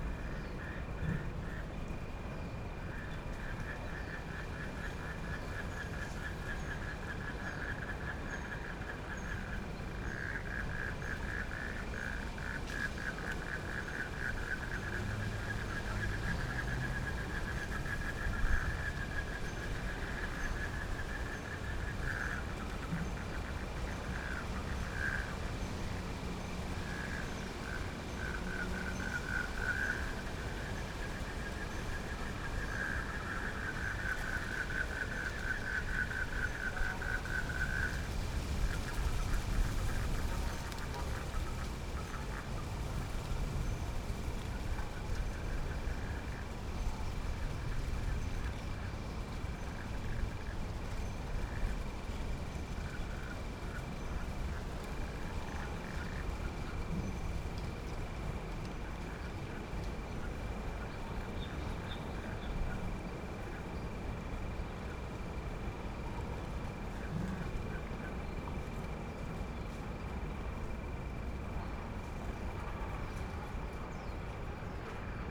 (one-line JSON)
{"title": "Nangang Park, Taipei - Frogs calling", "date": "2012-03-06 14:26:00", "description": "Frogs calling, Rode NT4+Zoom H4n", "latitude": "25.04", "longitude": "121.59", "altitude": "16", "timezone": "Asia/Taipei"}